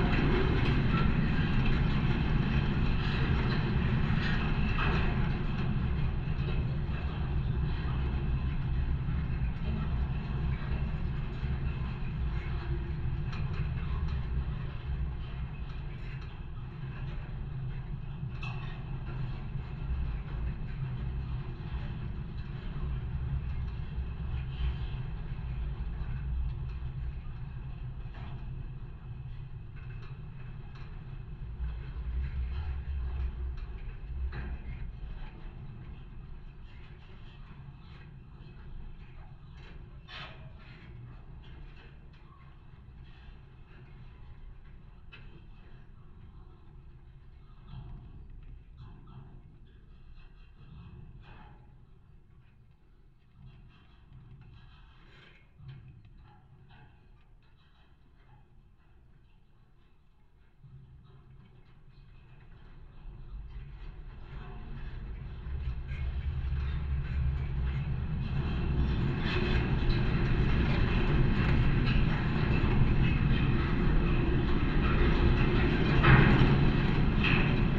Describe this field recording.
contact microphones on metallic fence in a forest